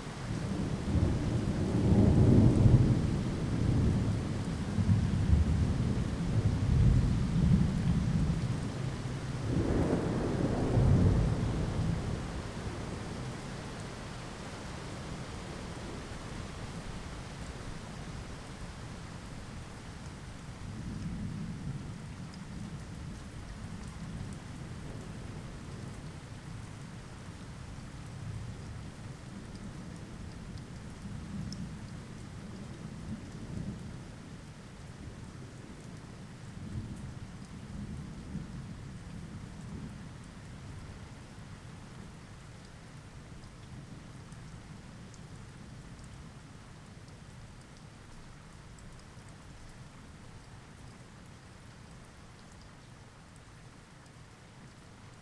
Rue de la Capitale, Marseille, France - Marseille - Quartier du Roucas Blanc - 1 heure d'orage en résumé en quelques minutes.
Marseille - Quartier du Roucas Blanc
Cette nuit, la Bonne Mère s'illumine au rythme des éclairs.
1 heure d'orage en résumé en quelques minutes.
Zoom F3 + Neuman KM184